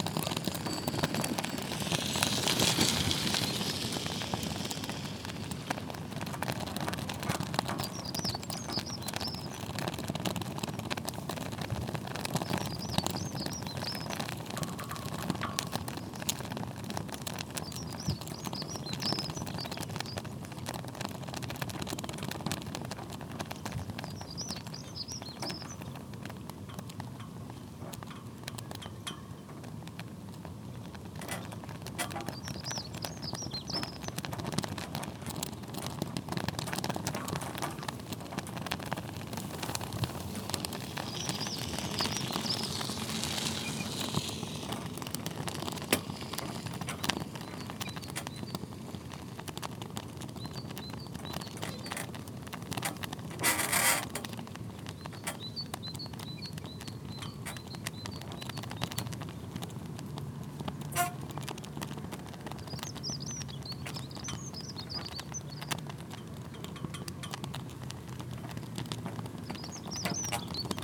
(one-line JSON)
{"title": "Nieuwvliet, Nederlands - Flags in the wind", "date": "2019-02-17 12:00:00", "description": "On the massive embankment protecting the polder, sound of two flags swaying into the wind.", "latitude": "51.39", "longitude": "3.45", "altitude": "8", "timezone": "Europe/Amsterdam"}